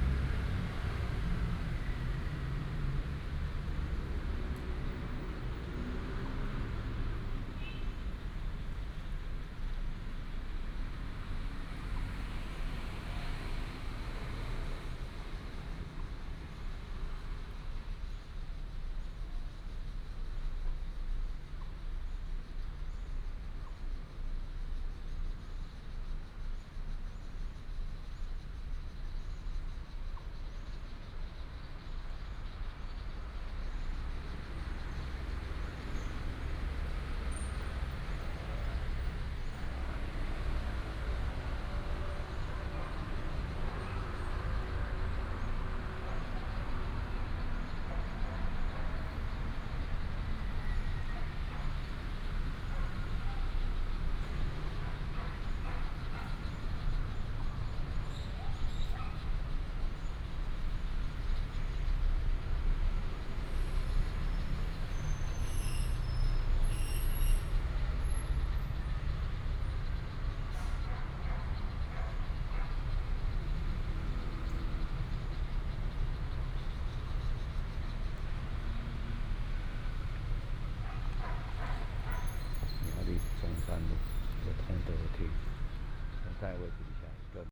同心園, Miaoli City, Miaoli County - in the Park
Traffic sound, Bird call, The train runs through, Dog sounds
Miaoli City, Miaoli County, Taiwan, 22 March 2017